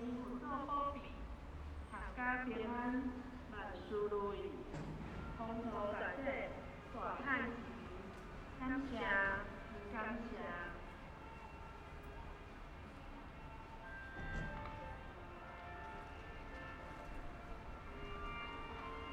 {"title": "芳苑鄉芳苑村, Changhua County - In front of the temple", "date": "2014-03-08 14:00:00", "description": "In front of the temple, Firecrackers, Traditional temple festivals\nZoom H6 MS", "latitude": "23.93", "longitude": "120.32", "timezone": "Asia/Taipei"}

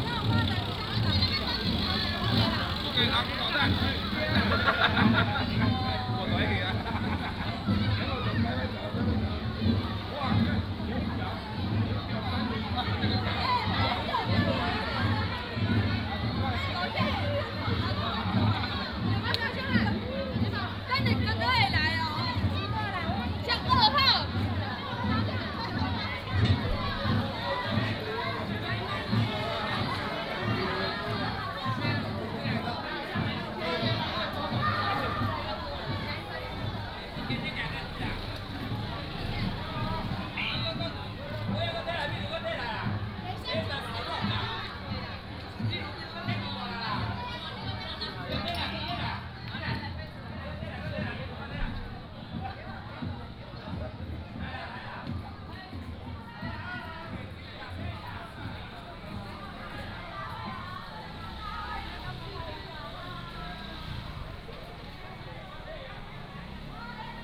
{"title": "南寮村, Lüdao Township - walking in the Street", "date": "2014-10-30 18:17:00", "description": "walking in the Street, Halloween festival parade", "latitude": "22.67", "longitude": "121.47", "altitude": "9", "timezone": "Asia/Taipei"}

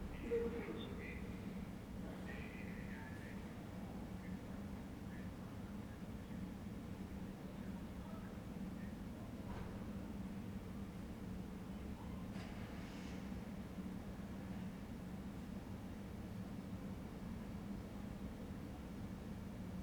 {"title": "Ascolto il tuo cuore, città. I listen to your heart, city. Several chapters **SCROLL DOWN FOR ALL RECORDINGS** - Terrace at sunset in the time of COVID19 Soundscape", "date": "2020-03-14 18:15:00", "description": "Chapter VIII of Ascolto il tuo cuore, città. I listen to your heart, city\nSaturday March 14th 2020. Fixed position on an internal terrace at San Salvario district Turin, four days after emergency disposition due to the epidemic of COVID19.\nStart at 6:35 p.m. end at 7:25 p.m. duration of recording 50'30''", "latitude": "45.06", "longitude": "7.69", "altitude": "245", "timezone": "Europe/Rome"}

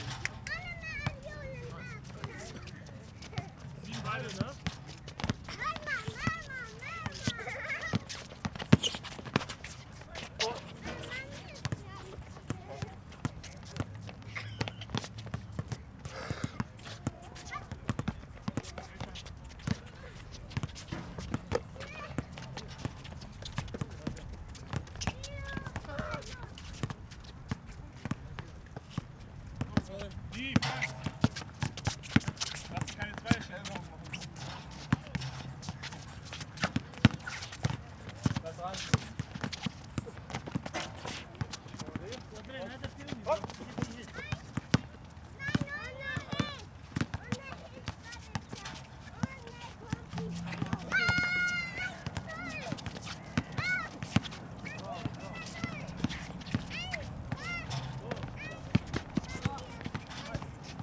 {"title": "koeln, venloer str, park", "date": "2011-11-01 16:20:00", "description": "streetball player in the park, warm 1st november day", "latitude": "50.94", "longitude": "6.93", "altitude": "47", "timezone": "Europe/Berlin"}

